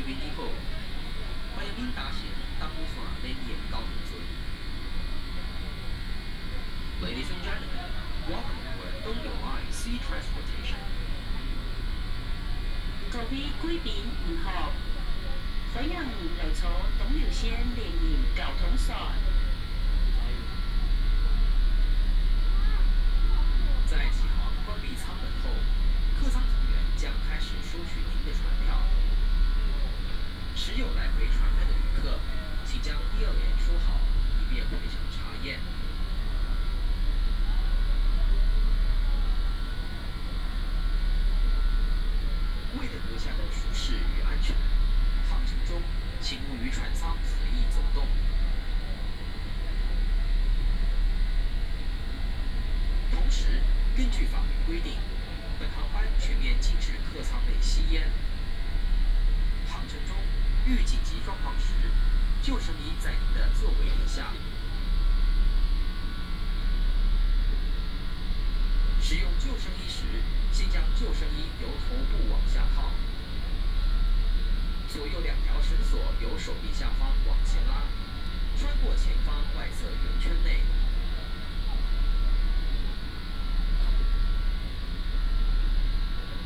東港漁港, Donggang Township - In the cabin
In the cabin, Information broadcast by boat
Donggang Township, 東港渡船碼頭[民營], 1 November 2014, 12:30